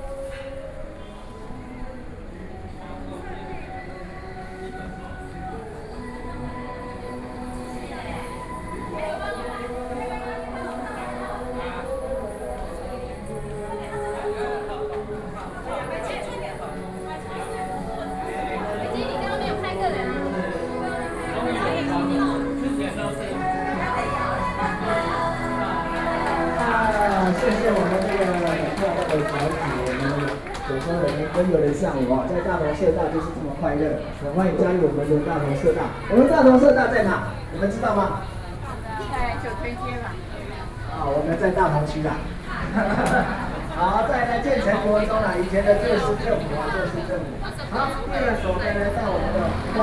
Ln., Kangding Rd., Wanhua Dist., Taipei City - SoundWalk